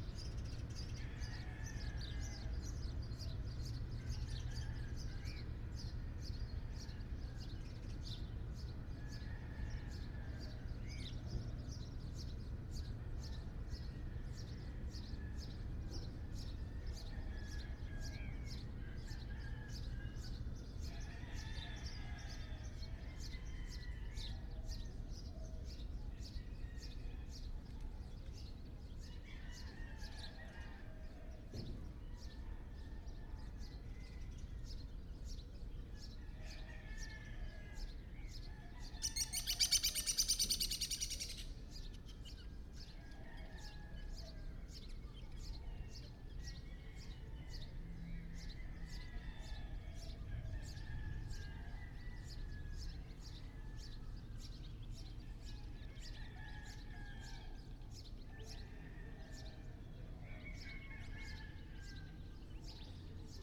Estación Wadley, Mexico - Central square of the small village of Wadley in the desert
Central square of the small village of Wadley in the desert.
During Morning 10am.
Recorded by an ORTF setup Schoeps CCM4 on a Sound Devices MixPre6.
Sound Ref: MX-190607-001
7 June, 10:00